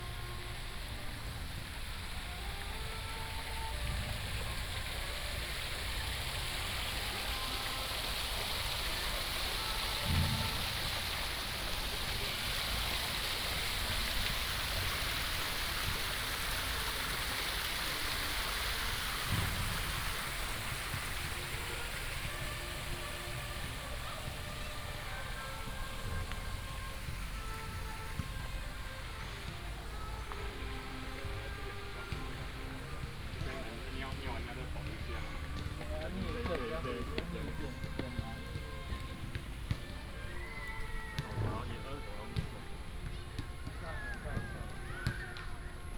慈濟公園, Fengyuan Dist., Taichung City - Walking in the park
Walking in the park, Traffic sound, play basketball, fountain, Childrens play area, Saxophone show, Binaural recordings, Sony PCM D100+ Soundman OKM II
Fengyuan District, Taichung City, Taiwan, 9 October 2017, 17:31